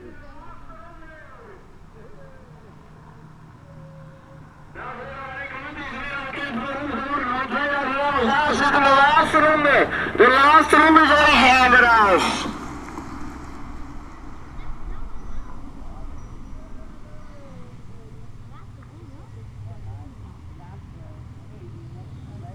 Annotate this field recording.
cycle race, the city, the country & me: july 2, 2011